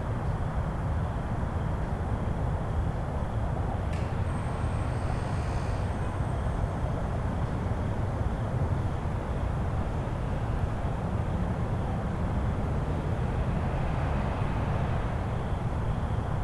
Bolton Hill, Baltimore, MD, USA - Park at night
Recorded using onboard zoom H4n microphones. Some crickets and traffic sounds.